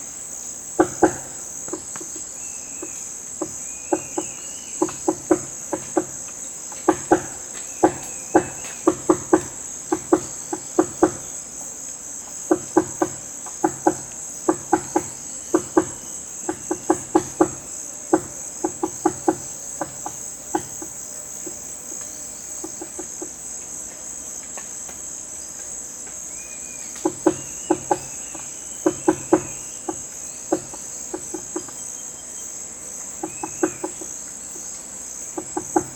Tauary (Amazonian Rainforest) - Woodpecker in the amazonian rainforest
A small woodpecker in the morning in the forest close to Tauary (Part of the FLONA of Tefé).
Brazil, 9 September